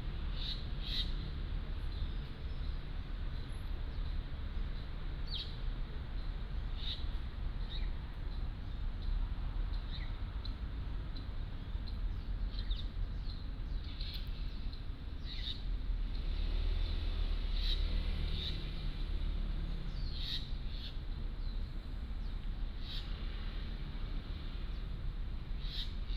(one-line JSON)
{"title": "Cixiu Rd., Changhua City - Near the railroad tracks", "date": "2017-02-13 08:48:00", "description": "Near the railroad tracks, The train passes by, Bird calls", "latitude": "24.08", "longitude": "120.54", "altitude": "16", "timezone": "GMT+1"}